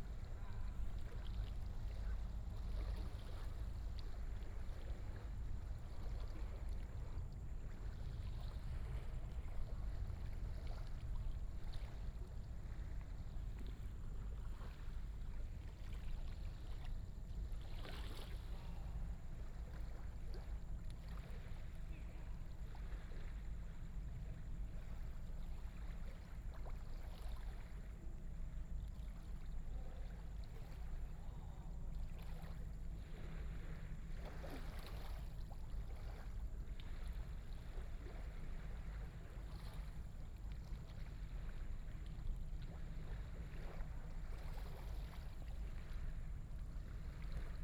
南寮漁港, North Dist., Hsinchu City - tide
In the fishing port, tide, Binaural recordings, Sony PCM D100+ Soundman OKM II
21 September 2017, 02:09